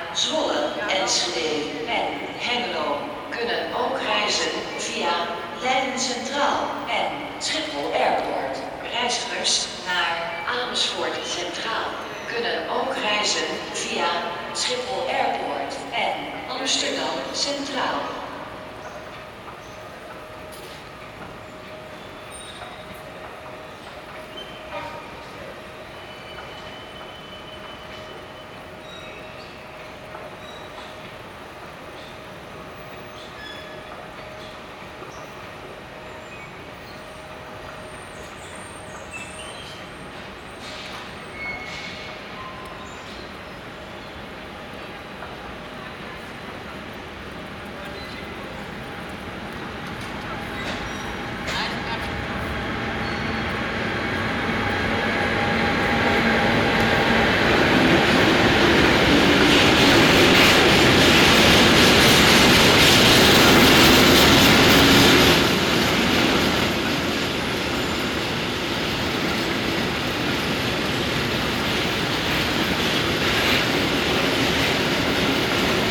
Rotterdam Centraal, Stationsplein, Rotterdam, Netherlands - Central Station during pandemic
Recorded on a Friday at 15hrs. Unusually quiet due to the pandemic.